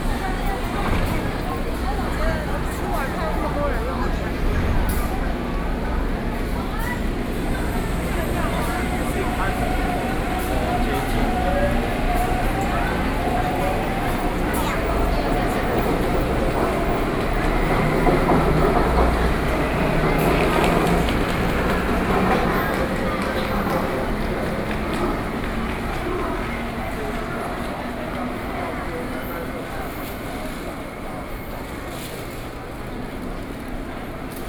Taipower Building Station, Taipei City - SoundWalk
Taipei City, Taiwan, 7 December 2012, 16:16